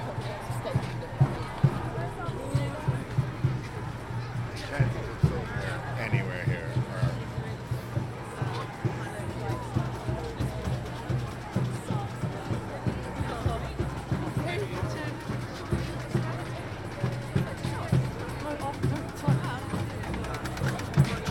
Women's March, Mayfair, London, UK - Women's Rights are Human Rights
This is the sound of the crowd from one listening place within the Woman's March 21st January, 2017. Recorded with binaural microphones. You can hear helicopters, drums, chat, chants, and me admitting that though I don't like crowds sometimes you have to brave them anyway.